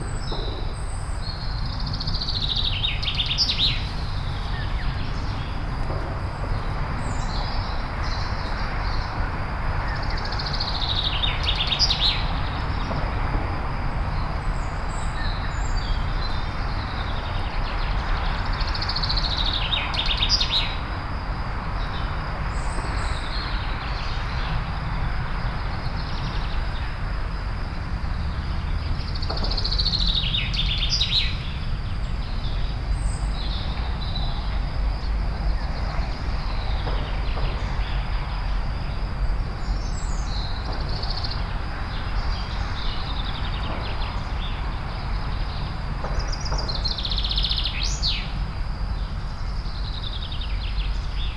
A39 highway, Aire du Jura under the trees.